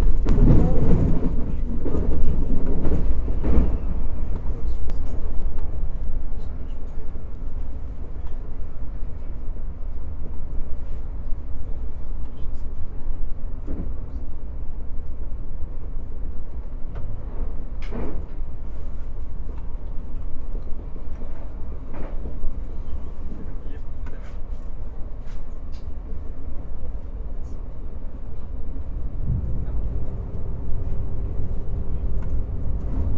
Rouen, France - Rouen train
Into the train from Rouen to Paris St-Lazare, the first minutes going out from Rouen.
23 July 2016